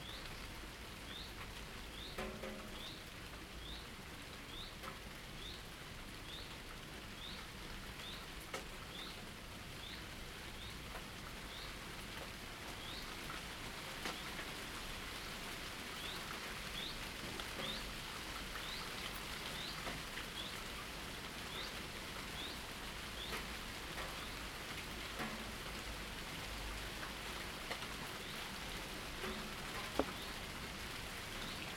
U Kněžské louky, Praha, Česko - Rainy day, quiet household
Light rain outside, water drips, dishes clack in the kitchen. Rain intensifies and clears again. Bird chirps.
Zoom H2n, 2CH, set on a shelf near open balcony door.
Praha, Česká republika, 21 July, ~10:00